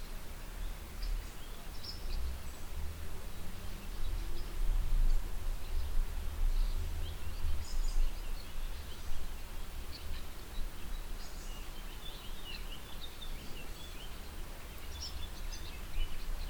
ouren, bridge, our
Under a small bridge that crosses the border river Our. The sound of the mellow water flow reflecting under the bridge architecture. Low water on a hot and mild windy summer evening.
Project - Klangraum Our - topographic field recordings, sound objects and social ambiences